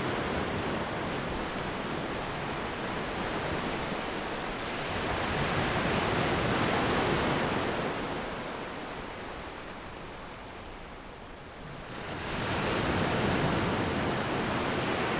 On the coast, Sound of the waves
Zoom H6 +Rode NT4
福建省, Mainland - Taiwan Border, 14 October 2014